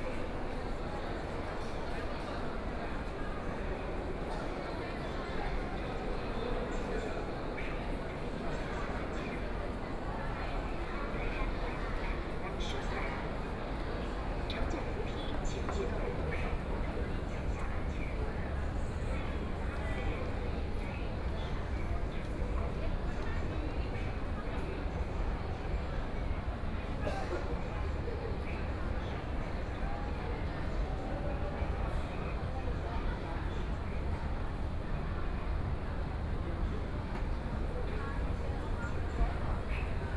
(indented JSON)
{
  "title": "Huangpu District, shanghai - walking in the Station",
  "date": "2013-11-23 16:26:00",
  "description": "From the station platform began to move toward the station exit, Binaural recording, Zoom H6+ Soundman OKM II",
  "latitude": "31.24",
  "longitude": "121.47",
  "altitude": "8",
  "timezone": "Asia/Shanghai"
}